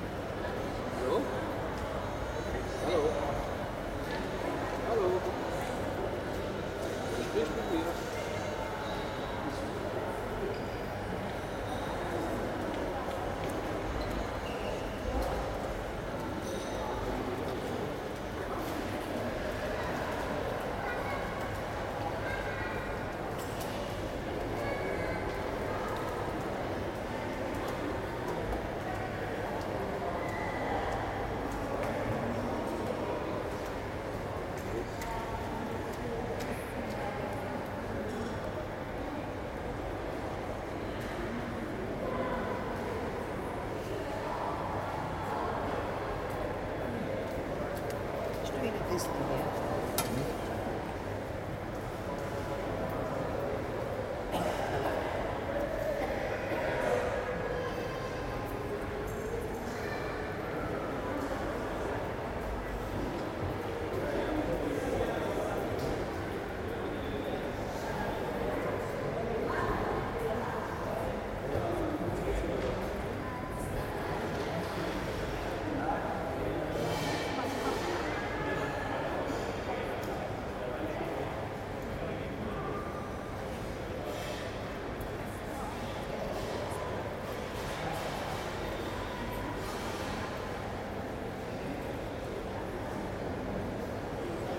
mannheim main station, hall
recorded june 29th, 2008.
part 1 of recording.
project: "hasenbrot - a private sound diary"
Mannheim, Germany